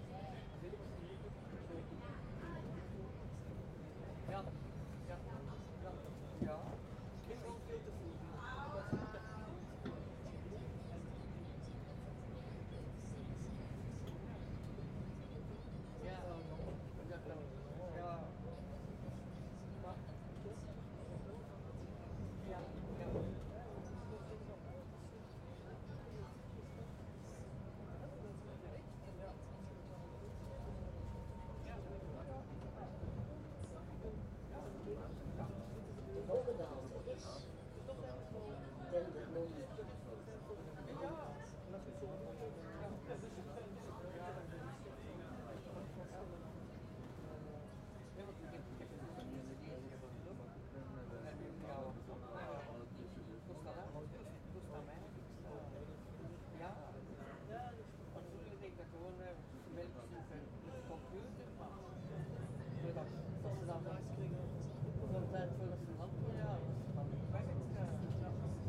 Departure to Heist-aan-Zee Lane 12 Gent Sint-Pieters - mens trein
ZOOM H2 recorded with 4 mics to 2 channels
8 July 2019, 10:32